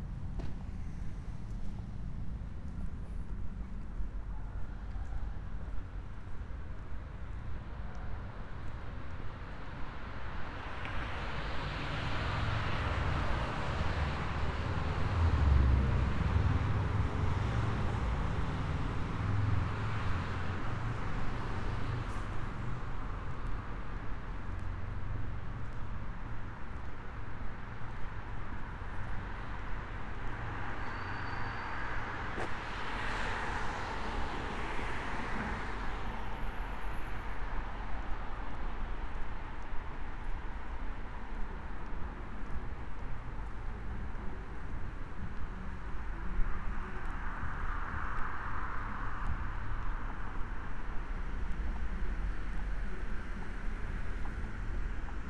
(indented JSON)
{
  "title": "Richartzstraße, Köln - midnight walk, ambience /w church bells",
  "date": "2020-09-30",
  "description": "Köln, Cologne, walking from the Dom cathedral to Breite Str. around midnight, church bells, cars, cyclists, homeless people, pedestrians, various sounds from ventilations, billboards etc.\n(Sony PCM D50, Primo EM172)",
  "latitude": "50.94",
  "longitude": "6.96",
  "altitude": "63",
  "timezone": "Europe/Berlin"
}